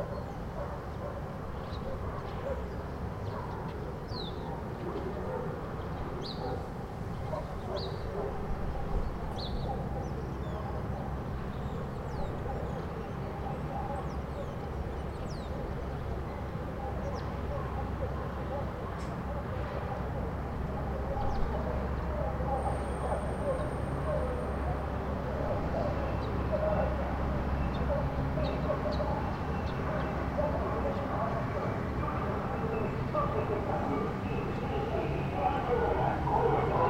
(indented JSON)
{
  "date": "2011-07-21 03:50:00",
  "description": "Pajaros, moto y avion publicitario, Tucuman, Argentina, WLD",
  "latitude": "-26.83",
  "longitude": "-65.24",
  "altitude": "442",
  "timezone": "America/Argentina/Tucuman"
}